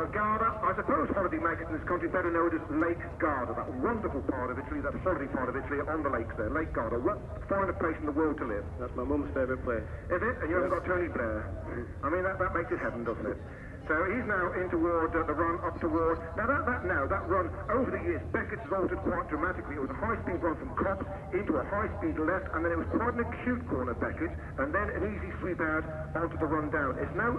World Superbikes 2003 ... Super Pole ... one point stereo mic to minidisk ...
Towcester, United Kingdom, 14 June 2003, 16:00